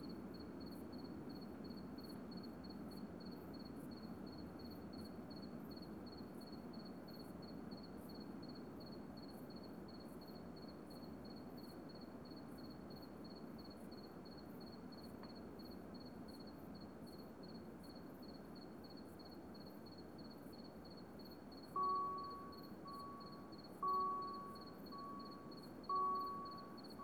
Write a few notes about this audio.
Kurort Rathen, river Elbe, night ambience at the railroad crossing. Something's squeaking, a cricket tunes in, a very long freight train is passing by at low speed and can be heard very long, echoing in the Elbe valley. After 5 minutes, the next train is arriving already. (Sony PCM D50)